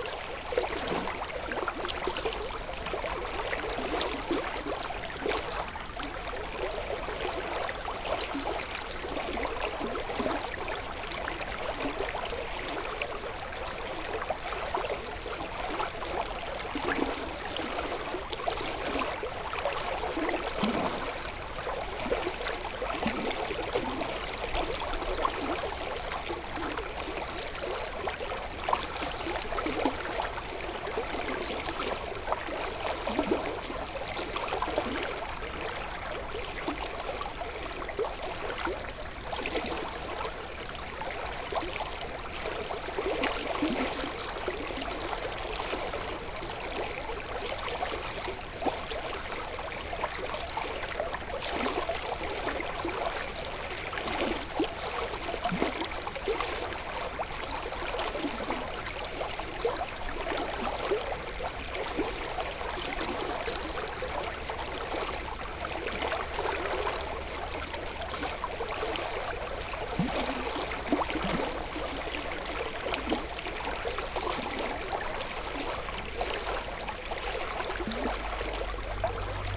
Clérey, France - Seine river in Clerey village
The Seine river is now large and alive, 140 km after the spring. This of fish river is very endearing, clear water, beautiful green trees. It's a bucolic place.